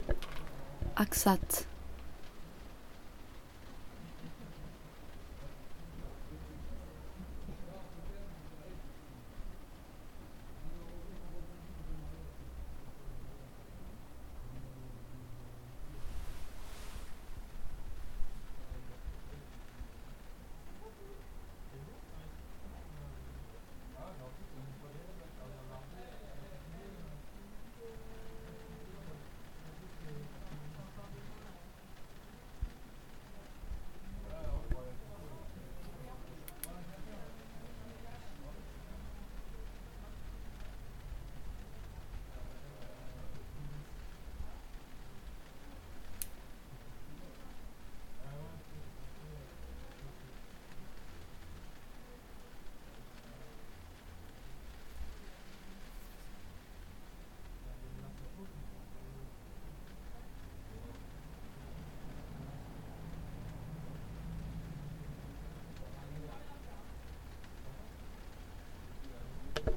Ambiance - Axat, France - field recording - country
Recorded with a Zoom H4n. Quiet atmosphere in this country side place. Beauty of the rain, the moon and spoken words far away...
11 June 2014, 23:58